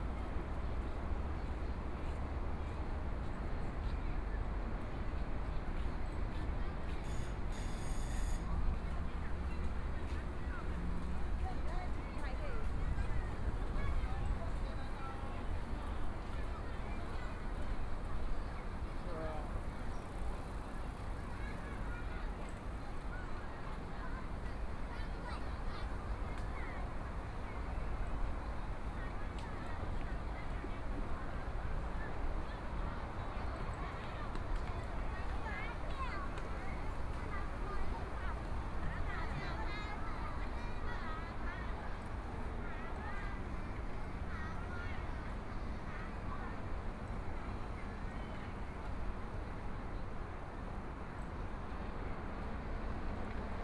Holiday, Walking along the river, Sunny mild weather, Traffic Sound, Aircraft flying through, MRT train sounds, Sound from highway
Binaural recordings, ( Proposal to turn up the volume )
Zoom H4n+ Soundman OKM II
Taipei City, 汐止五股高架段, 16 February 2014, 16:16